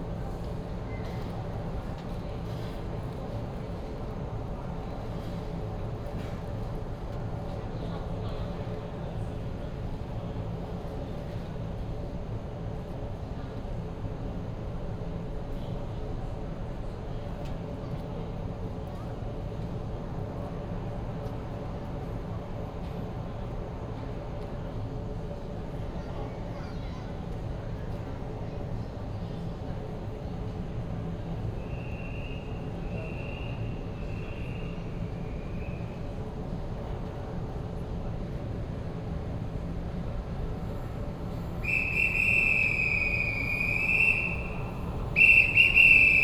Taoyuan Station, Taoyuan City 桃園區 - At the train station platform
At the train station platform, The train arrives, Into the train compartment, next to the air conditioning noise